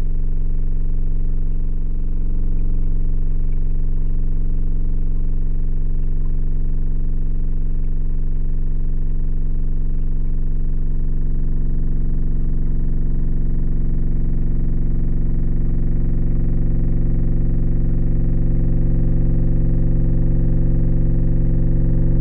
Bonaforth Schleuse Kanurutsche Motor
today the motor which is moving the floodgate for the canoes doesn stop working when the gate is closed. The metal is vibrating all the time causing standing wave lines on the water. Hydrophone + ZoomH4
Hann. Münden, Germany